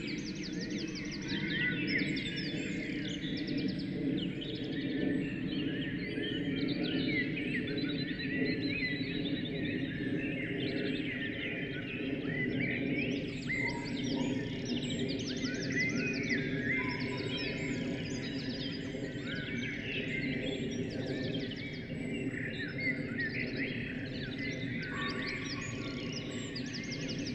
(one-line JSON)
{"title": "Warburg Nature Reserve, Nr Henley on Thames UK - The Start of the Dawn Chorus and first hour", "date": "2018-05-07 04:06:00", "description": "We got to the site with a lovely half moon before the chorus had started. There were some Tawny Owls calling, the odd Pheasant, and then the first Robins heralded the beginning. There are Blackbirds, Song Thrushes, Pheasants, Wrens, Chiff-Chaffs, Wood Pigeons, Greater Spotted Woodpecker, Whitethroats, Hedge Sparrows, a Muntjack Deer barking, Great Tits, Carrion Crows, a car arriving, two people talking and laughing, and of course several aircraft. Recorded on a Sony M10 with a spaced pair of Primo EM 172 mic capsules.", "latitude": "51.59", "longitude": "-0.96", "altitude": "104", "timezone": "Europe/London"}